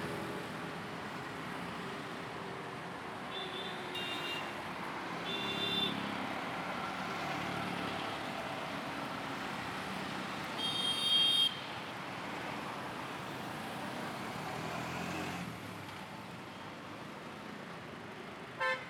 Pandit Bhagwan Sahay Vats Vitthi, Aviation Colony, INA Colony, New Delhi, Delhi, India - 17 Its safer, they say
Typical symphony of horns in a everyday traffic. Supposedly "it is safer", to announce your presence with a horn.
13 February 2016, 12:16